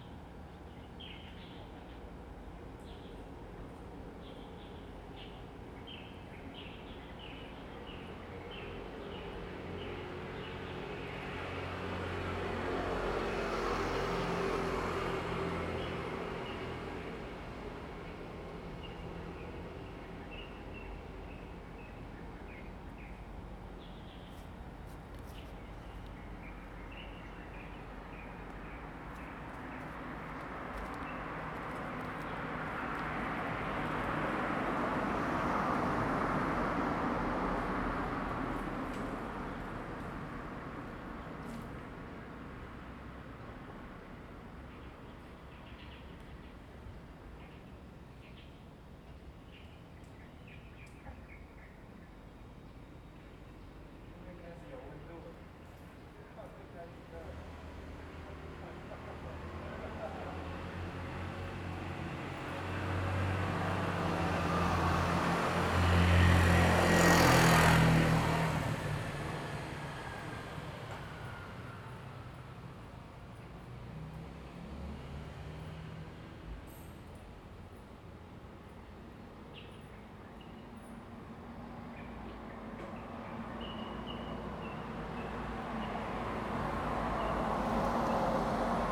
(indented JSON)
{
  "title": "Wenchang Rd., Taitung City - Birds singing",
  "date": "2014-09-08 06:40:00",
  "description": "Birds singing, Traffic Sound, Morning streets\nZoom H2n MS+XY",
  "latitude": "22.79",
  "longitude": "121.13",
  "altitude": "44",
  "timezone": "Asia/Taipei"
}